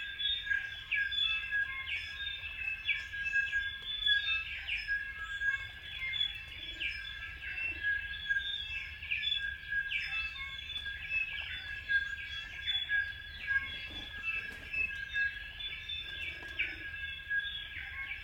Kennedys Bush, Christchurch, New Zealand - Dawn chorus at Sign of the Bellbird
MKH 34/40 m-s